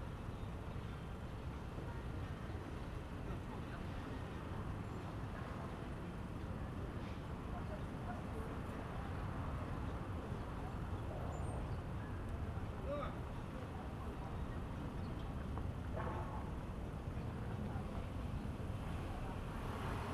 大同路海濱公園, Taitung City, Taitung County - Square in the park
Square in the park, Fighter flying past, Traffic sound, Birdsong, Tourist
Zoom H2n MS +XY